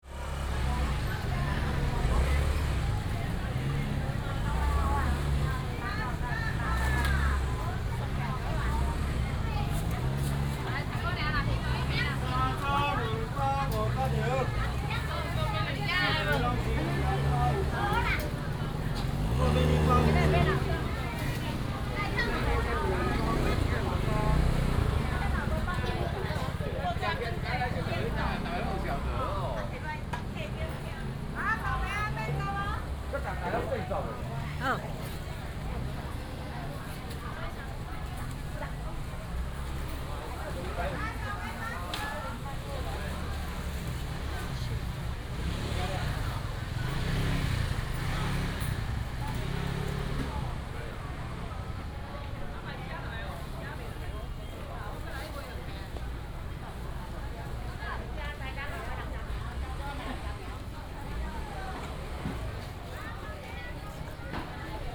Ln., Sanmin Rd., Changhua City - in the traditional market
Walking in the traditional market